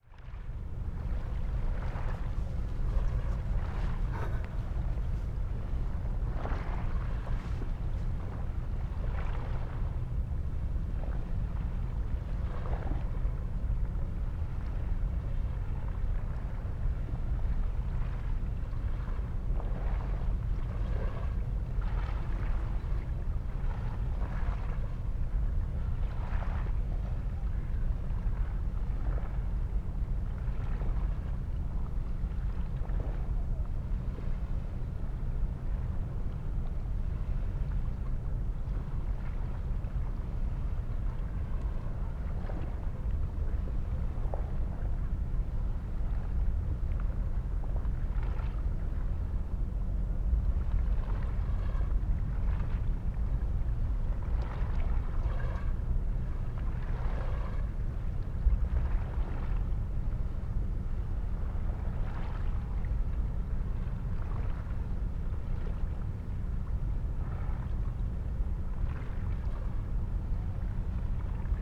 Molo, Punto Franco Nord, Trieste, Italy - at ground level
with ears (mics) close to the dock in massive white stones